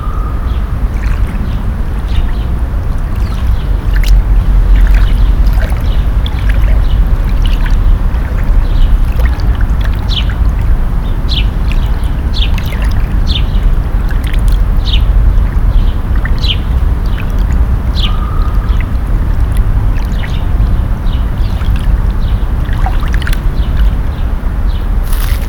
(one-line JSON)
{"title": "Little Paradise on Kastrychnitskaya, Minsk, Belarus - Midsummer paradise in the centre of Minsk", "date": "2016-08-06 15:04:00", "description": "If you are walking on Kastrychnickaya street and are tired of the noise of local cafes, people and urban surroundings, if you want to retire and stay in silence → just go to the iron door near the building #23a, there is a small garden with a fountain, lazy cats, ducks and other resting birds. Enjoy this peace and quiet!", "latitude": "53.89", "longitude": "27.57", "altitude": "200", "timezone": "Europe/Minsk"}